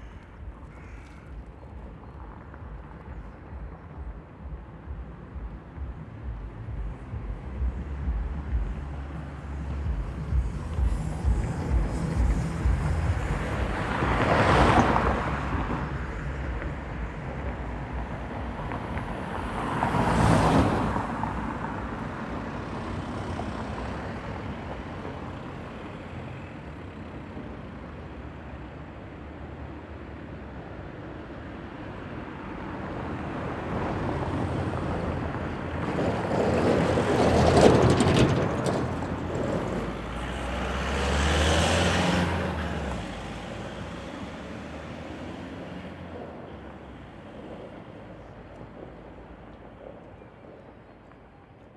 Burgstraße, Giebichenstein, Halle (Saale), Deutschland - Burgstraße, Halle (Saale) - cars passing on a cracked road
Burgstraße, Halle (Saale) - cars passing on a cracked road. [I used the Hi-MD-recorder Sony MZ-NH900 with external microphone Beyerdynamic MCE 82]